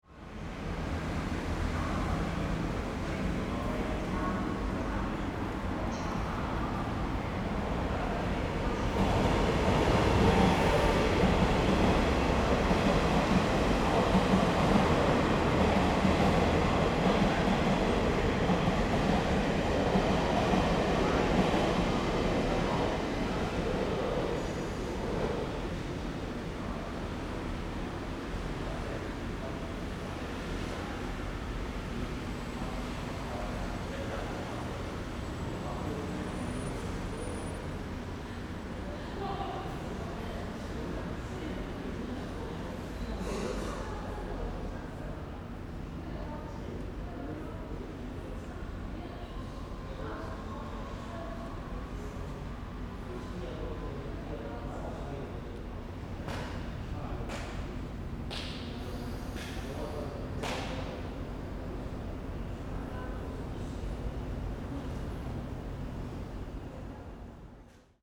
Zuoying Station - Station hall
Station hall, Traffic Noise, Rode NT4+Zoom H4n